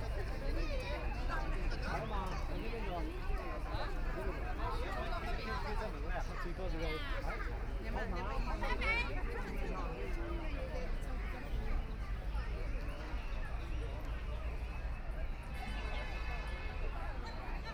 Hongkou District, Shanghai - Walking into the park
Walking into the park entrance, Binaural recording, Zoom H6+ Soundman OKM II